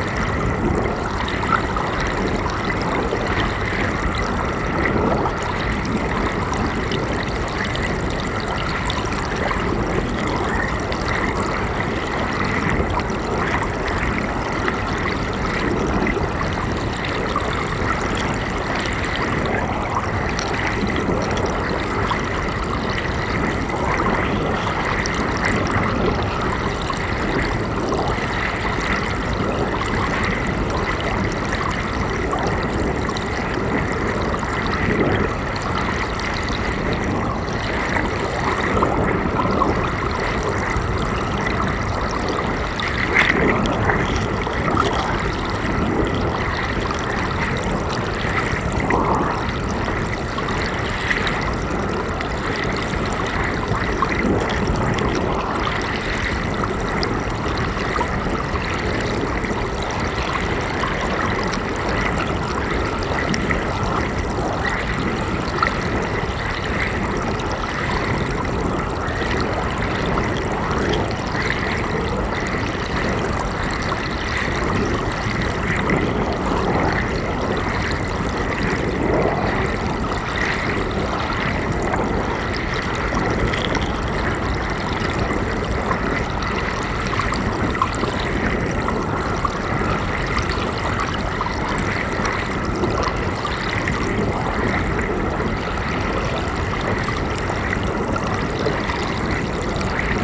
It was at this spot that the notorious self-styled Witchfinder General, Matthew Hopkins, probably in 1645, subjected women to ‘swimming tests’ in which they were thrown into the water tied to a chair to see if they would float or sink, floating confirming them as witches (as fresh flowing ‘baptismal’ water would abhor a witch), sinking (with probably drowning) confirming their innocence.
Manningtree, Essex, UK, 18 July 2012, 20:00